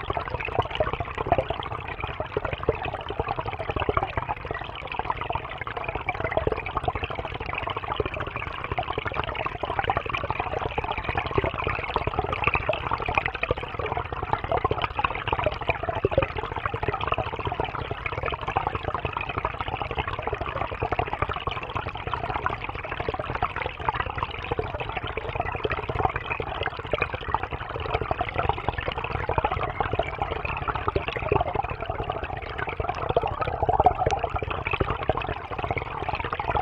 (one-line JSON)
{"title": "Eastman Hall, Ithaca, NY, USA - Stream flowing", "date": "2021-02-17 12:50:00", "description": "Flowing stream caused by snow melt near Eastman Hall\nRecorded with a hydrophone", "latitude": "42.42", "longitude": "-76.49", "altitude": "278", "timezone": "America/New_York"}